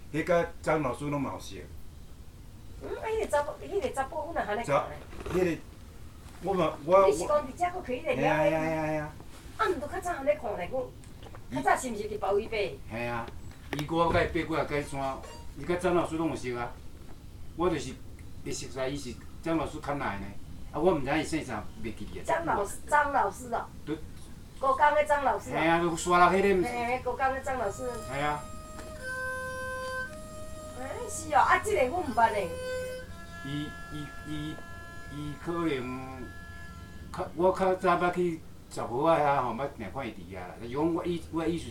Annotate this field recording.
While hiking at Dankeng Mountain, Taichung I heard an Erhu sound from one of the pavillions. Three friends were talking in native Taiwanese language. One of them was practicing erhu. I sat down for ten minutes to rest during this difficult hike and I recorded his play and their chat. I found out they were talking about a friend who used to hike together with the erhu player. The player immediately quit playing when I took a picture. He must have been too shy as he is still learning to master the instrument. Recorded at Touke Mountain, Dakeng, Taichung, Taiwan with my Tascam DR-40, Click following link to find more field recordings: